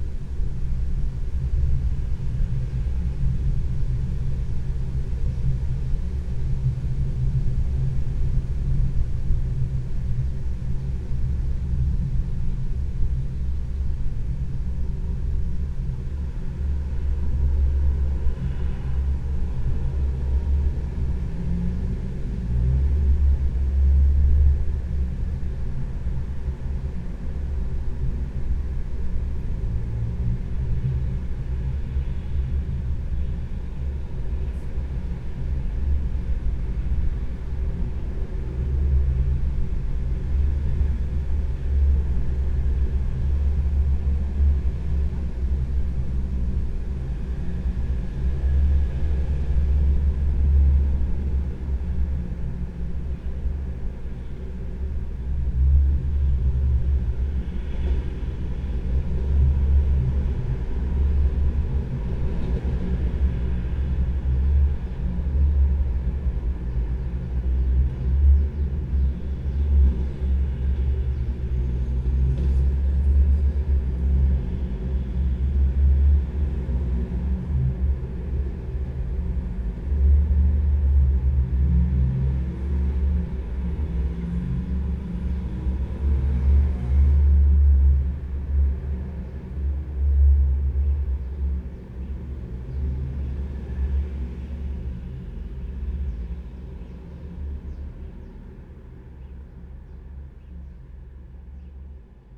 some metallic tube (a gas torch) and how the city sounds in it...deep resonances of traffic